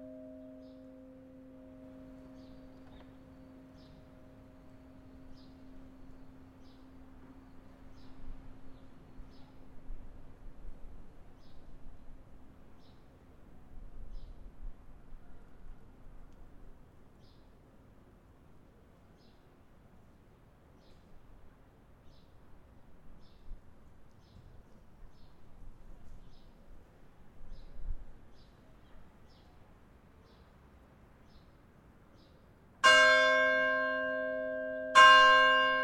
Recorded during first lockdown, near church
Zoom H6 capsule xy
Nice weather
5 April, 12:05